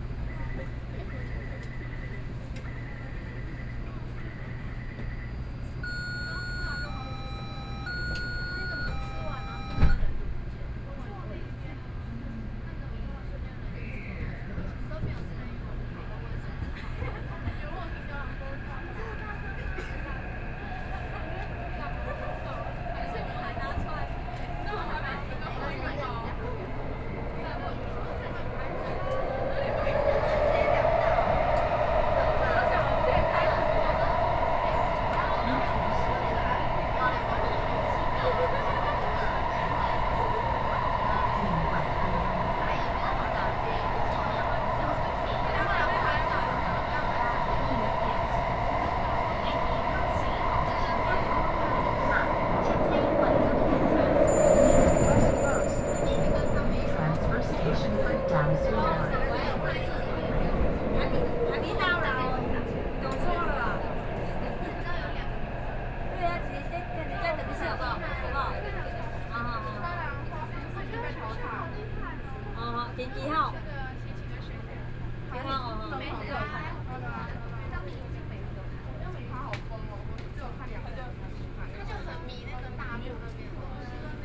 from Zhongxiao Xinsheng Station to Minquan West Road station, Binaural recordings, Sony PCM D50 + Soundman OKM II